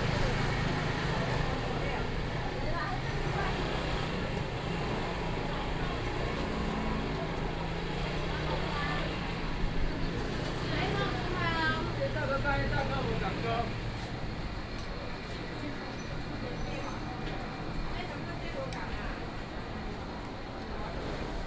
Walking through the traditional market
Please turn up the volume a little. Binaural recordings, Sony PCM D100+ Soundman OKM II